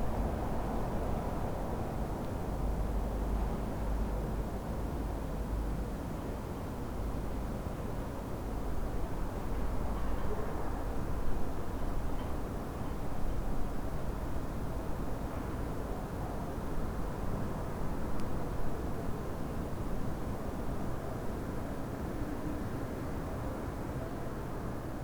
Berlin: Vermessungspunkt Maybachufer / Bürknerstraße - Klangvermessung Kreuzkölln ::: 28.03.2012 ::: 00:32